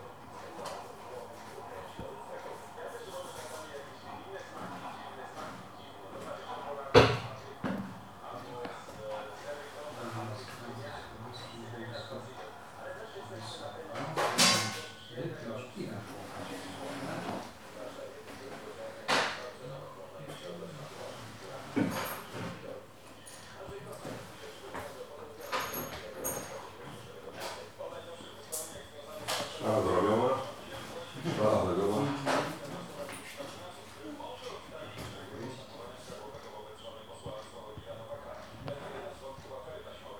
sounds of dentist's tools. conversation with the patient and with another patient over the phone. dental technician coming late. stream of popular radios station. in the waiting room, a bored little girl sigh and singing under her breath.
17 June 2014, ~14:00